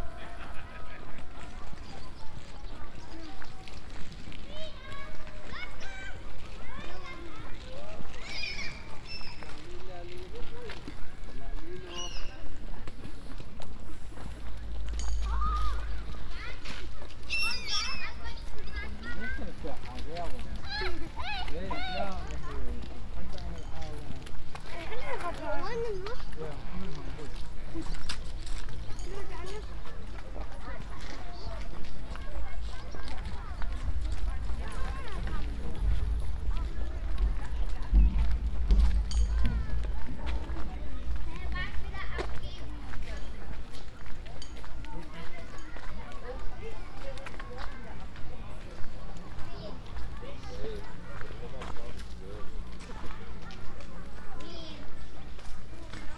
Ziegelwiese Park, Halle (Saale), Germania - WLD2020, World Listening Day 2020, in Halle, double path synchronized recording: A
WLD2020, World Listening Day 2020, in Halle, double path synchronized recording: A
In Halle Ziegelwiese Park, Saturday, July 18, 2020, starting at 7:48 p.m., ending at 8:27 p.m., recording duration 39’18”
Halle two synchronized recordings, starting and arriving same places with two different paths.
This is file and path A:
A- Giuseppe, Tascam DR100-MKIII, Soundman OKMII Binaural mics, Geotrack file:
B – Ermanno, Zoom H2N, Roland CS-10M binaural mics, Geotrack file: